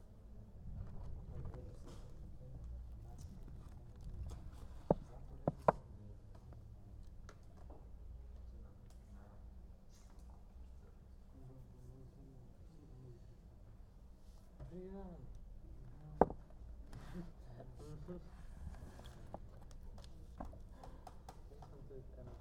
Nova Gorica, Slovenija, Bevkova Knjižnica - Brskanje Po Policah
Nova Gorica, Slovenia, 8 June 2017, ~16:00